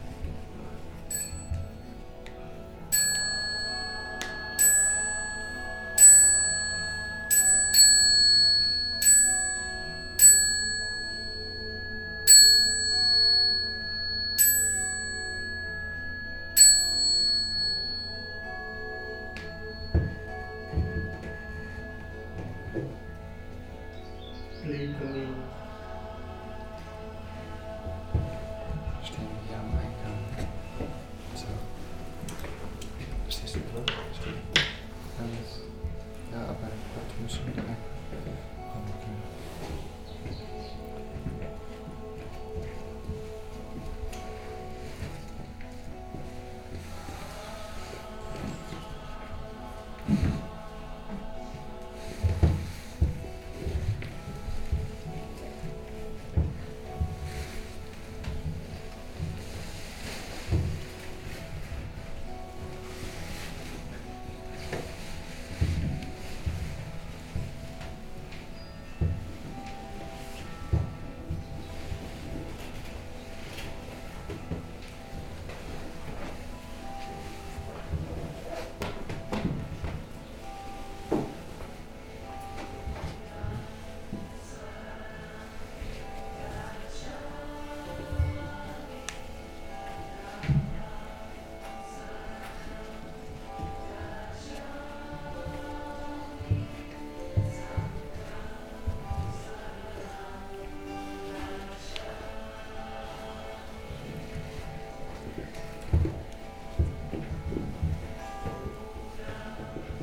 Unnamed Road, Dorchester, UK - Formal Tea Meditation Pt1
A formal tea meditation hosted by Brothers Phap Xa who facilitates and Phap Lich who prepares the tea. Guests are invited into the meditation hall with the sound of the bell, they enter in single file and bow to the two hosts. Phap Xa welcomes the guests and the ceremony begins with a short period of sitting meditation marked by three sounds of the larger bell. (Sennheiser 8020s either side of a Jecklin Disk on SD MixPre6)
1 October 2017, 10:30